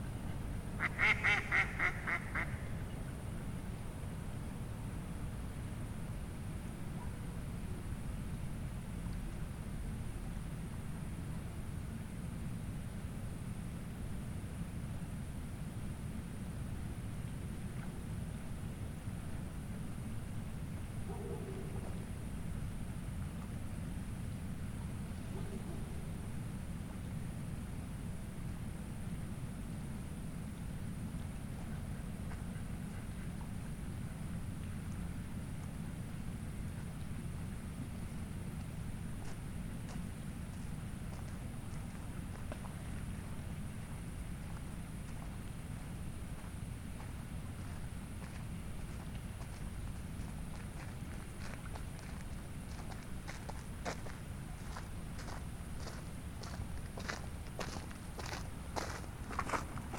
Trije ribniki, Maribor, Slovenia - corners for one minute
one minute for this corner: Trije ribniki